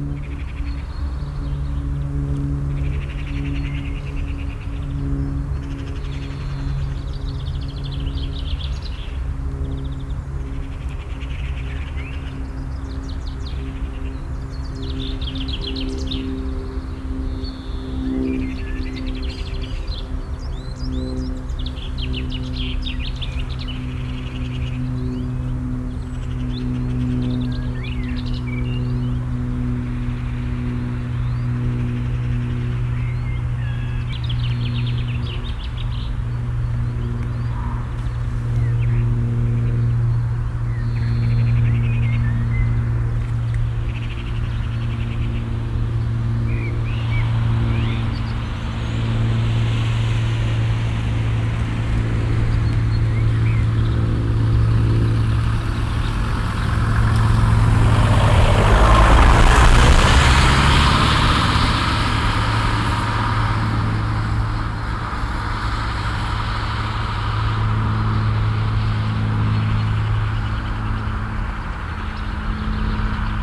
monheim, schleider weg, am schloss laar, flugzeug + pkw
flugzeugüberflug einer einmotorigen maschine am frühen abend, parallel vorbeifahrt eines pkw
soundmap nrw:
social ambiences, topographic field recordings